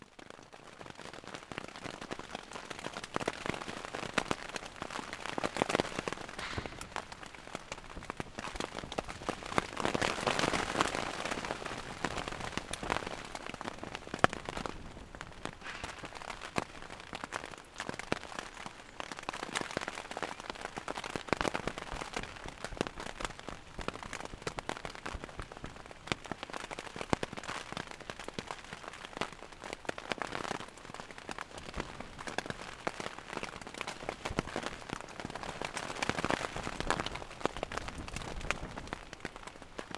Dartington, Devon, UK - soundcamp2015dartingtontent rain and chopping wood